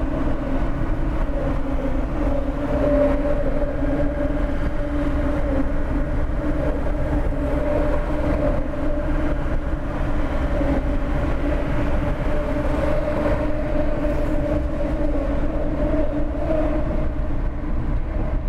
Lisboa, Portugal - 25 de Abril Bridge singing with the cars
My girlfriend driving and I am by her side recording the resonant ambient of the bridge made by the cars.
I used the MS mic of my ZOOM H6.
The audio footage is RAW, only have a fade in and out.